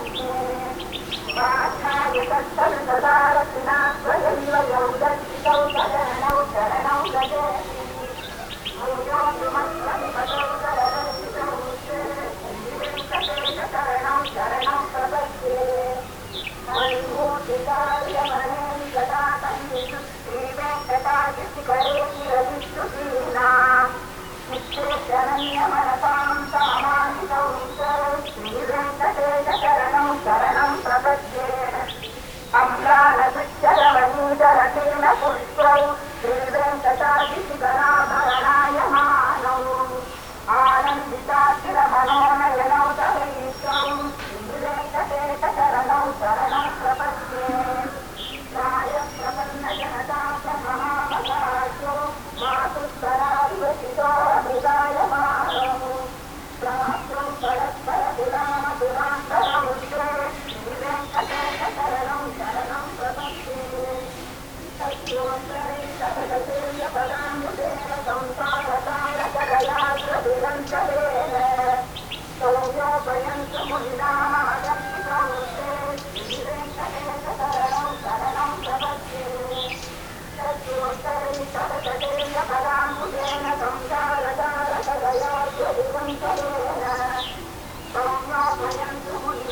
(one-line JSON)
{"title": "Nullatanni, Munnar, Kerala, India - dawn Munnar - over the valley", "date": "2001-11-05 14:59:00", "description": "dawn Munnar - over the valley part one", "latitude": "10.09", "longitude": "77.06", "altitude": "1477", "timezone": "Asia/Kolkata"}